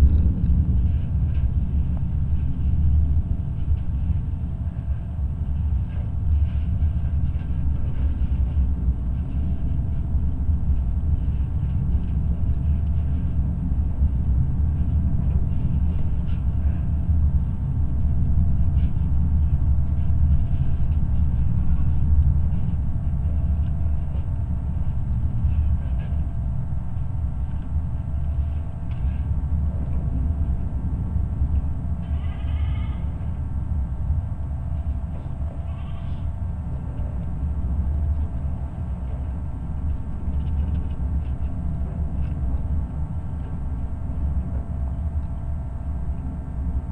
Antalgė, Lithuania, sculpture King Mindaugas and Queen Morta
Open air sculpture park in Antalge village. There is a large exposition of large metal sculptures and instaliations. Now you can visit and listen art. Multichanel recording using geophone, contact microphones and electromagnetic antenna Ether.
Utenos apskritis, Lietuva, 24 July 2020, ~16:00